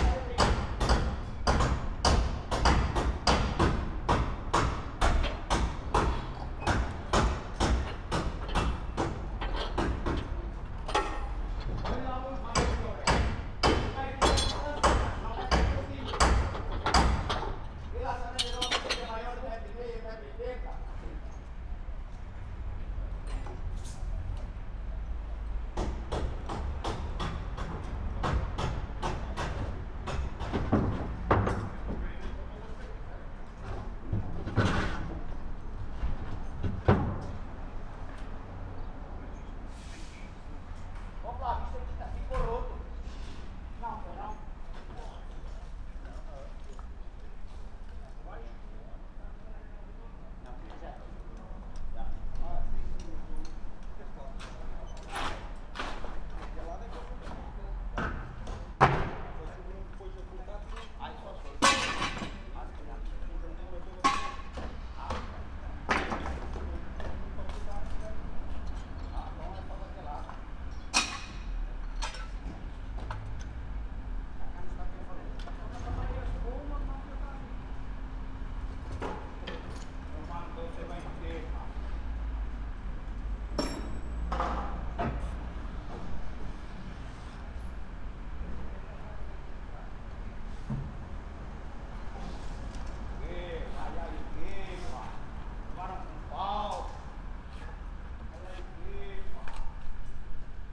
St.Joseph church, Esch-sur-Alzette, Luxemburg - construction work
construction work opposite of St.Joseph church, Esch-sur-Alzette
(Sony PCM D50, Primo EM272)